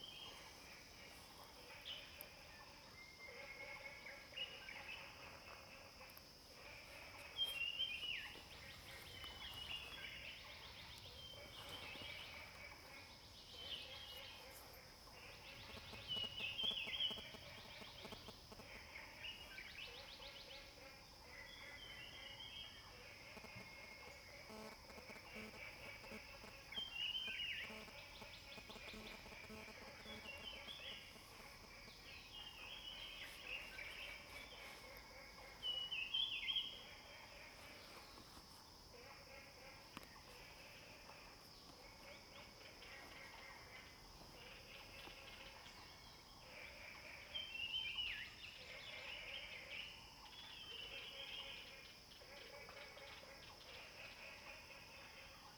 蓮華池藥用植物標本園, 魚池鄉五城村 - wetlands
birds sounds, wetlands, Frogs chirping
Zoom H2n MS+XY
Nantou County, Yuchi Township, 華龍巷43號, 21 May 2016